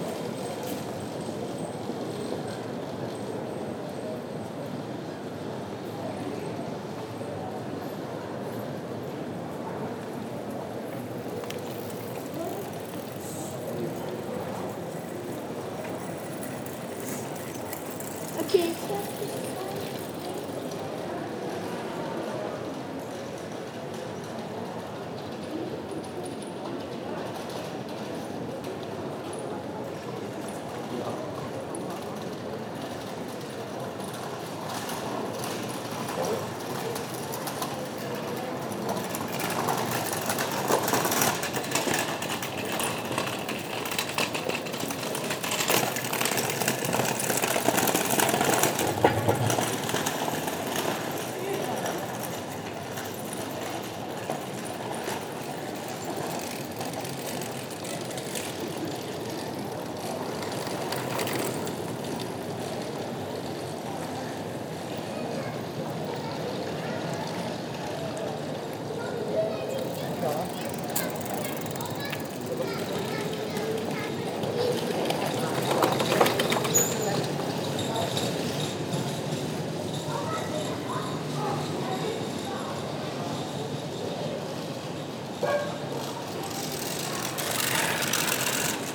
Mechelen, Belgique - Cobblestones street
A very old street of Mechelen, made with cobblestones. People discussing, bicycles driving fast on the cobblestone : the special pleasant sound of an every day Flemish street. At the end, a student with a suitcase, rolling on the cobblestones.